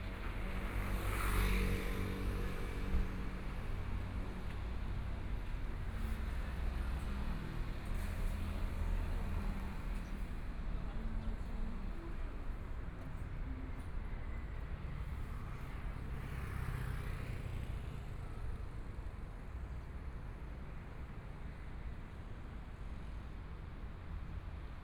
聚盛里, Zhongshan District - Traffic Sound
Environmental sounds, Traffic Sound, Binaural recordings, Zoom H4n+ Soundman OKM II
Taipei City, Taiwan, 6 February 2014